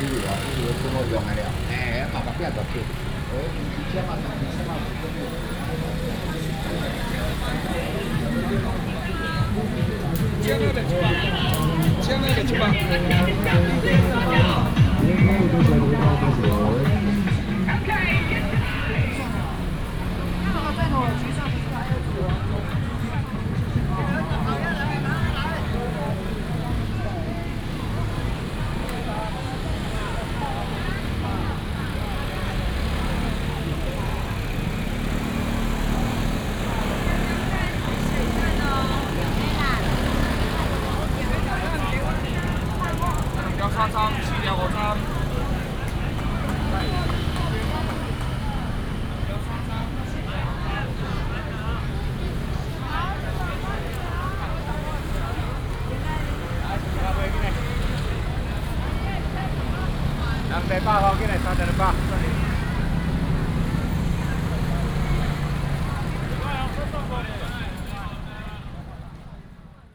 Walking in the traditional market, Traffic sound